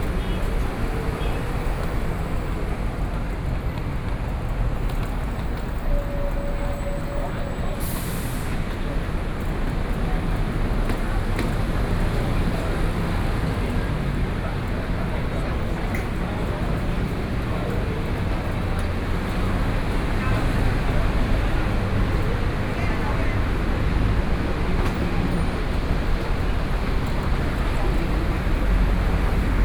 Nanjing E. Rd., Taipei City - Walking on the road
Walking on the road, Traffic Sound, Noon break